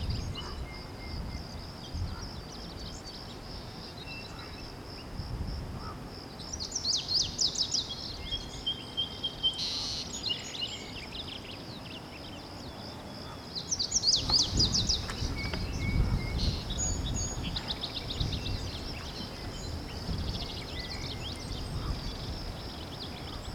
Chino Hills State Park, CA - Daily Peace Walk
18 April 2022, 14:00